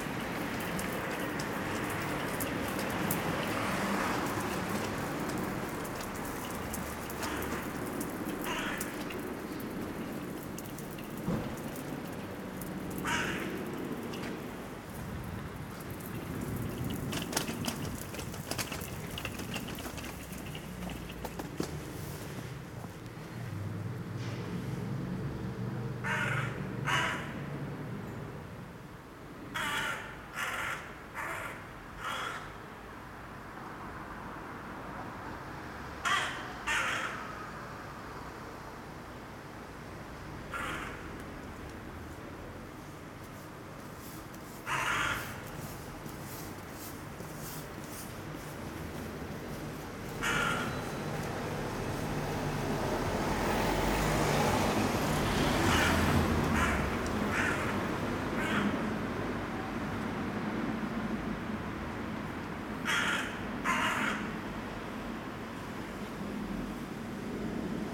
Rue Veydt, Ixelles, Belgique - Corbeau - Raven
Walking then staring at him on a roof.
Tech Note : Ambeo Smart Headset binaural → iPhone, listen with headphones.
Région de Bruxelles-Capitale - Brussels Hoofdstedelijk Gewest, België / Belgique / Belgien, February 2022